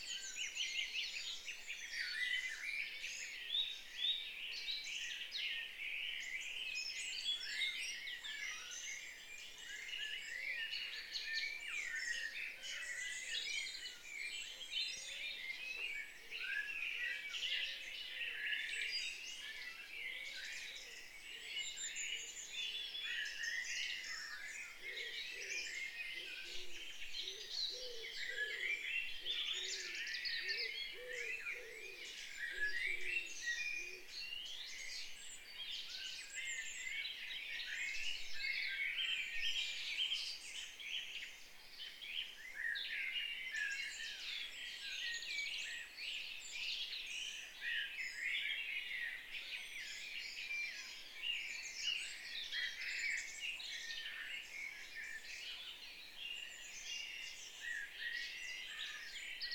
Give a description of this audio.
Early morning birdsong in Cranleigh Surrey. Rode NT2 fig 8 Side and Rode NT1 mid recorded to macbook